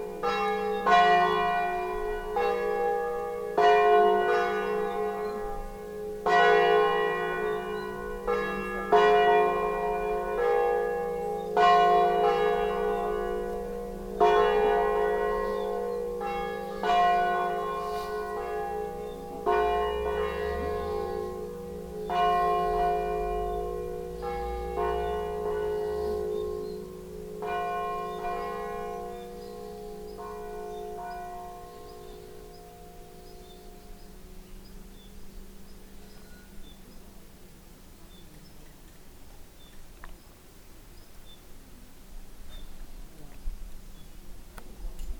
alto, field path, sunday church bells